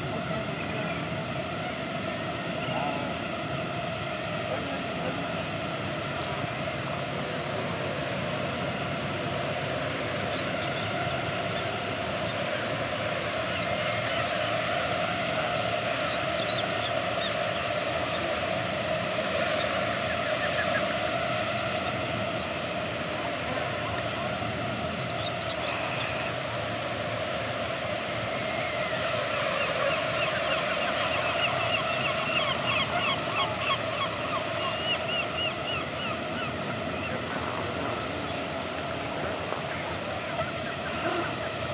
Between some old abandoned salt marshes, a mosquitoes cloud. More exactly, it's a gnat cloud, carried away by the wind.
Les Portes-en-Ré, France, 21 May 2018